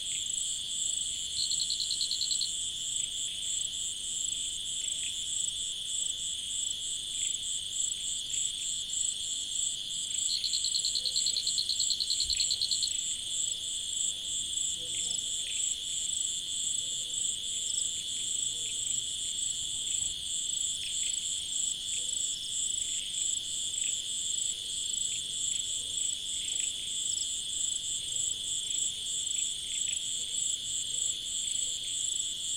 Koforidua, Ghana - Suburban Ghana Soundscapes 2
A part of field recordings for soundscape ecology research and exhibition.
Rhythms and variations of vocal intensities of species in sound.
Recording format AB with Rode M5 MP into ZOOM F4.
Date: 19.04.2022.
Time: Between 10 and 12 PM.
New Juaben South Municipal District, Eastern Region, Ghana